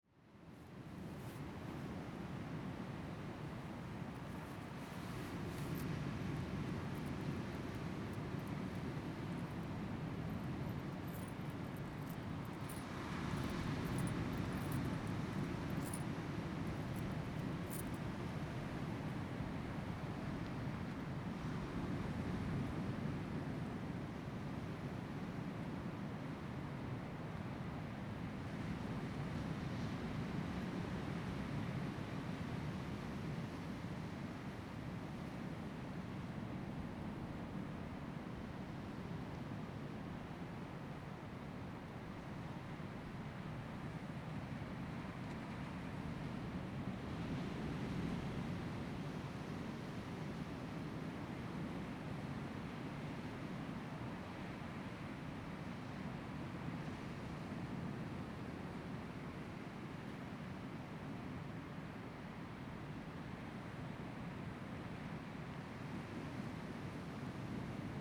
Lüdao Township, Taitung County - Environmental sounds
Environmental sounds, sound of the waves
Zoom H2n MS +XY
Taitung County, Taiwan, October 2014